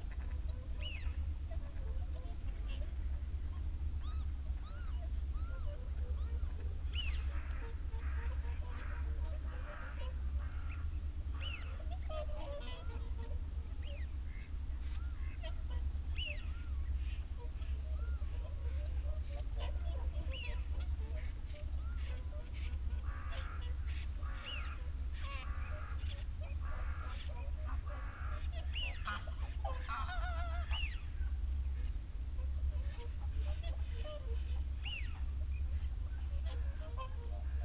Dumfries, UK - whooper swan and jackdaw soundscape ...
whooper swan and jackdaw soundscape ... dummy head with binaural in ear luhd mics to olympus ls 14 ... bird calls from ... shoveler ... wigeon .. snipe ... lapwing ... canada teal ... blackbird ... crow ... rook ... wren ... blue tit ... great tit ... huge jackdaw flock circling from 39 mins on ... time edited unattended extended recording ...
Alba / Scotland, United Kingdom, 30 January 2022